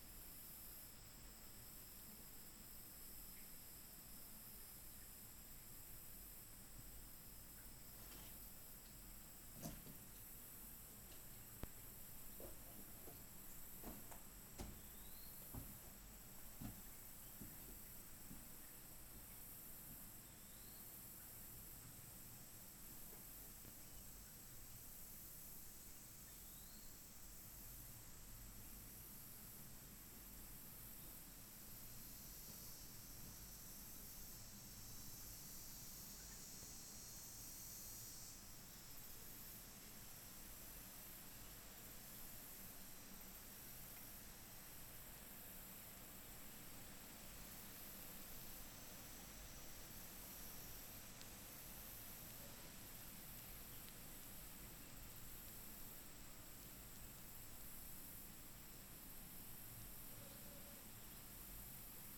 Faris Caves, Kanopolis, Kansas - Inside the Main Cave
Inside the center, main cave. A few small stones are tossed about. Some land in pools of water, some bounce off the inner walls. Air bubbles up through water early on. Birds, wind and cicadas can be faintly heard from outside. Stereo mics (Audiotalaia-Primo ECM 172), recorded via Olympus LS-10.
Geneseo, KS, USA, 3 September 2017